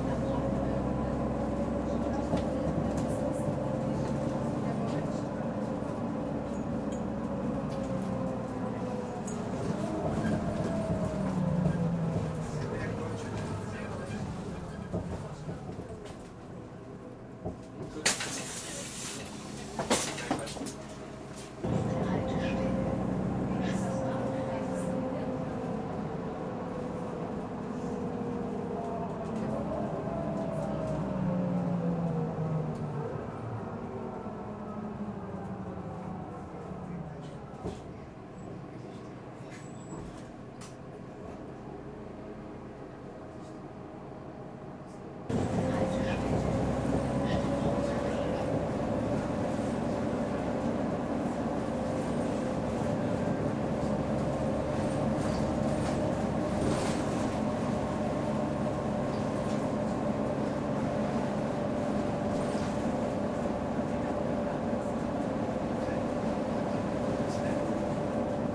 Mit den Buslinien 154, 156 und 351 über 27 Stationen von der nördlichsten (Steinwerder, Alter Elbtunnel) bis zur südlichsten (Moorwerder Kinderheim) Bushaltestelle Wilhelmsburgs.